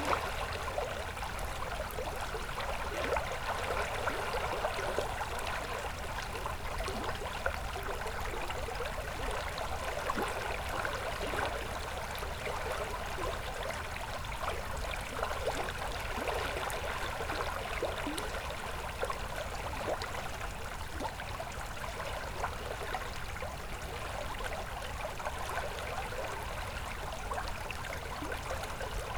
Lithuania, Utena, river in oak wood
sitting o the shore of small river in the oak wood